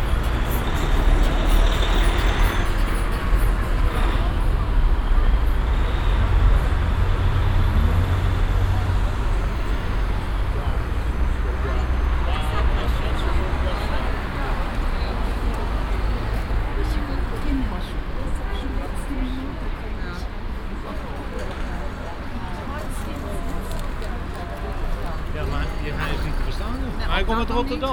bushaltestelle für reisebusse, vorwiegend chinesische touristengruppe nach dombesichtigigung, pkws, schritte
soundmap nrw: social ambiences/ listen to the people - in & outdoor nearfield recordings